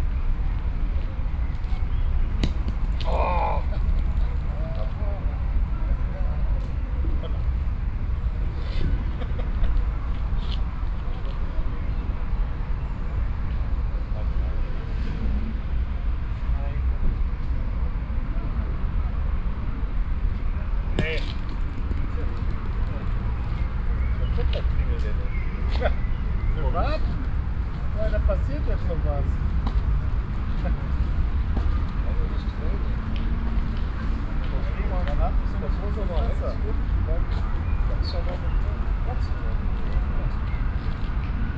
essen, city park, boule player
In the evening a group of men playing boule on the foot path.
The sound of the players comments, the clicking metall balls, a jogger passing by and some pigeon calls.
Projekt - Klangpromenade Essen - topographic field recordings and social ambiences